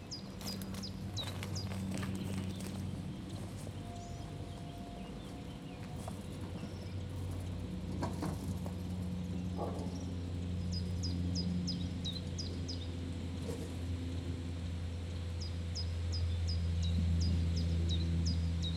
Antonienstraße, Bitterfeld-Wolfen, Deutschland - chemical nature
A recording of a seemingly intact natural habitat dwelling over chemical waste in the polluted grounds of Bitterfeld. If you go down to the ground, you get the smell of strange evaporations.
Binaural mix from an ambisonic recording with a Sennheiser Ambeo